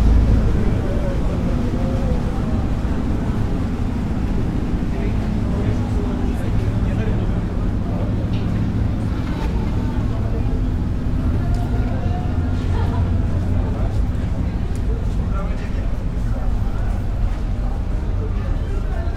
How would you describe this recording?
recording of the walk from the market tunnel on to the lower deck of the bridge